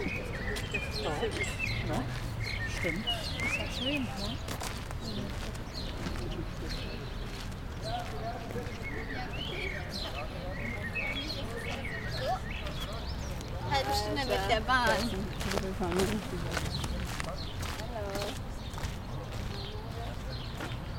berlin, paul-linke-ufer - Canalside ambience
On the footpath by the Landwehrkanal. Recorded with Shure VP88 stereo microphone. Walkers, joggers, cyclists, birds. Distant traffic.